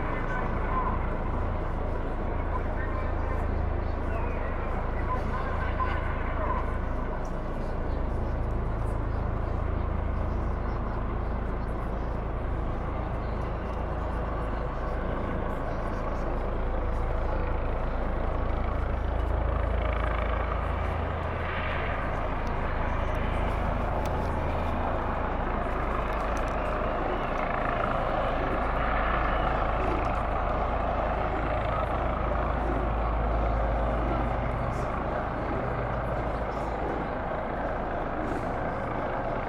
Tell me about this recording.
demonstrations, police chopper, with megaphone calling to people not to violate public order laws